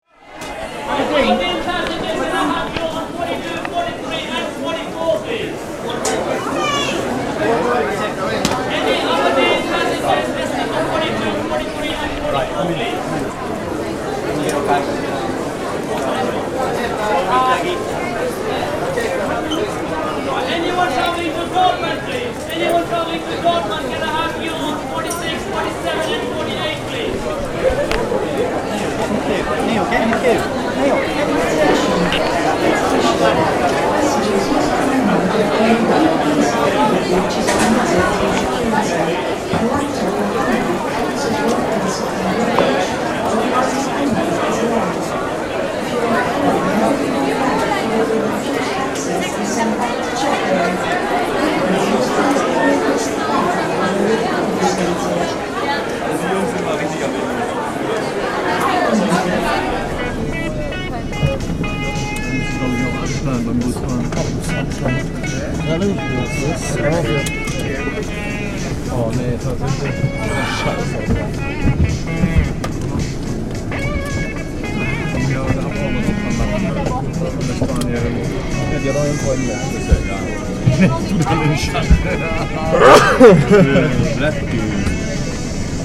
Luton Airport, UK
French air traffic controller strike causes chaos at Luton airport.